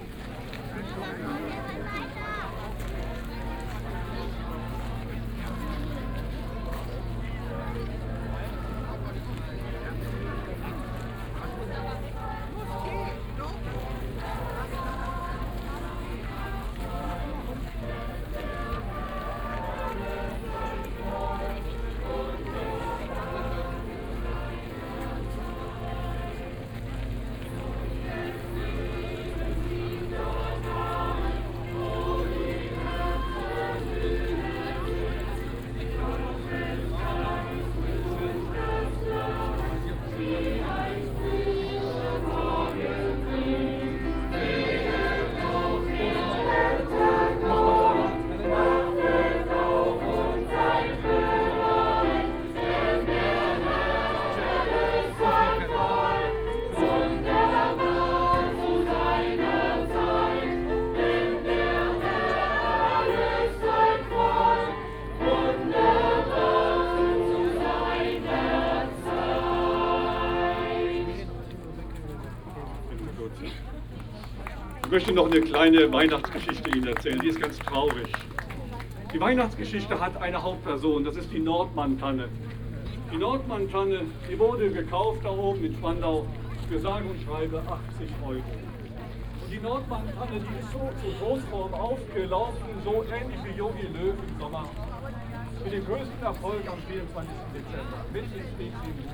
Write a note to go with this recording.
Berlin Kladow, weekend tourist's place preferably approached by the public transport ferry boat from Wannsee station, walk over Christmas market, singers, voices, market ambience, (Sony PCM D50, OKM2)